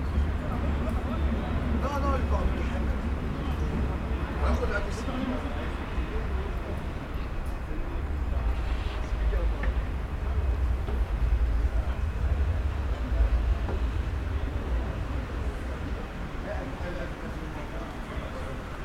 Sint-Jans-Molenbeek, Belgium - A station is also a meeting place
A train station is also a meeting spot, another use of a quay, in this case, the train sound is from a further track, but can be heard a group of young people just sitting there to chat : meeting just there before heading together somewhere. The end-of-track repeated sounds are from the uplifting escalator to the quiet hall.